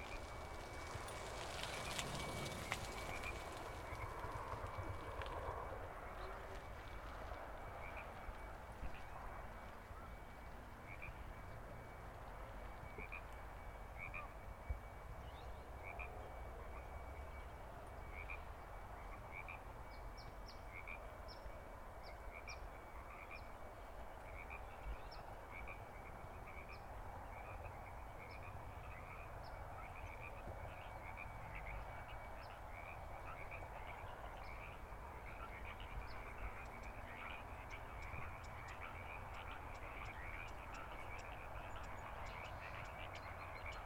St, Point Reyes Station, CA, USA - frogsong pt. reyes station
recording taken on a walking path by the town, near a pond that houses some wildlife. cars on the shoreline highway can be heard in the distance, along with a high-pitched ringing sound I could not identify.